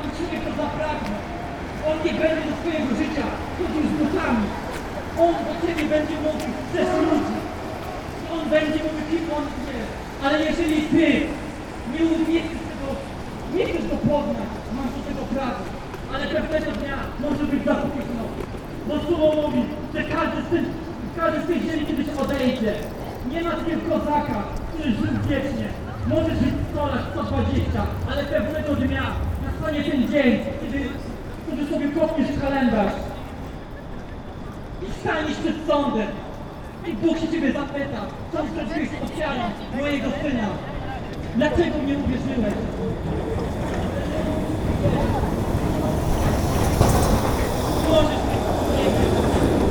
Most Teatralny, Poznan, Poland - religious guy
on a cold evening a young guy set up a PA system and vigorously shouted to the microphone about facing your sins, meeting god for the final judgement and possibility of salvation. (roland r-07)
15 March 2019, ~21:00